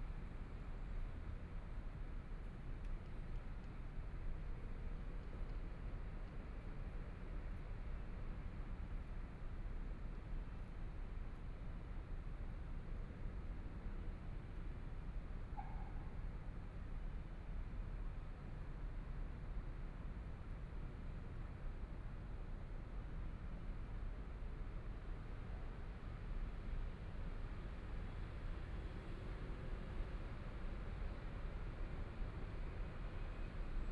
Chung Shan Creative Hub, Taipei - in the square
Sitting in the square, Environmental sounds, Traffic Sound, Binaural recordings, Zoom H4n+ Soundman OKM II
Taipei City, Taiwan, 6 February